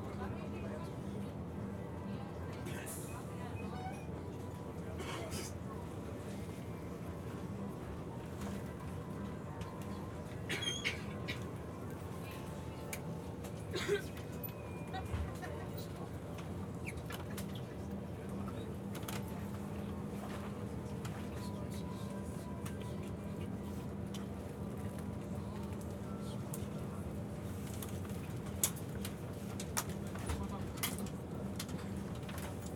Almeirim, on the train to Porto - ticket inspection
ticket inspector going along the carriage, clipping tickets, talking to some of the passengers, announcing incoming station. train stops for a brief moment at the station.